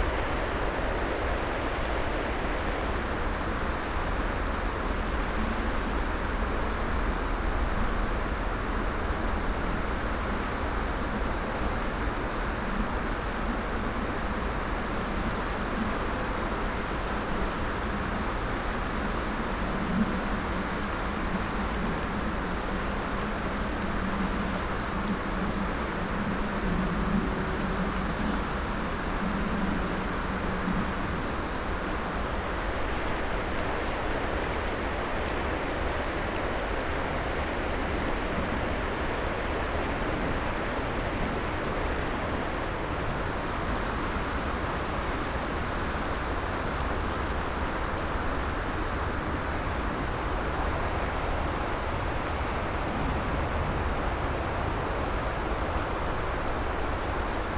dry grass, broken trees, high stems, it seems there were high waters here recently
Malečnik, Slovenia, March 2015